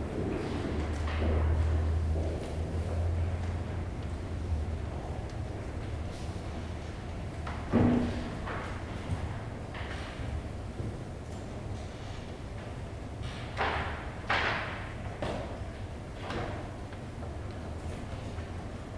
Kungliga Biblioteket, Humlegårdsgatan, Stockholm, Sweden - Study room in the Royal Library, Stockholm
Library study room noise, people walking, handling books, consuming knowledge.
Recorded with Zoom H2n. 2CH, deadcat, handheld.